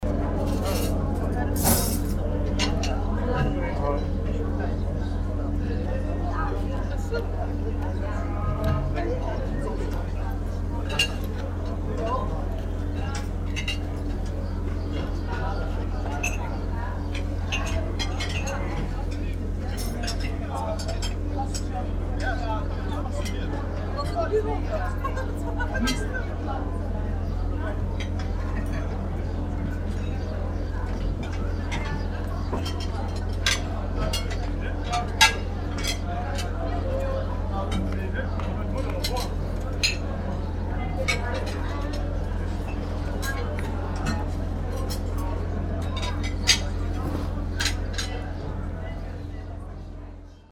hupperdange, street festival, dishes
Washing and preparing of dishes during a local street festival.
Hupperdange, Straßenfest, Geschirr
Waschen und Vorbereiten von Geschirr während eines regionalen Straßenfestes.
Aufgenommen von Pierre Obertin währen eines Stadtfestes im Juni 2011.
Hupperdange, fête de rue, vaisselle
Lavage et préparation de vaisselle pendant une fête de rue régionale.
Enregistré par Pierre Obertin en mai 2011 au cours d’une fête en ville en juin 2011.
Project - Klangraum Our - topographic field recordings, sound objects and social ambiences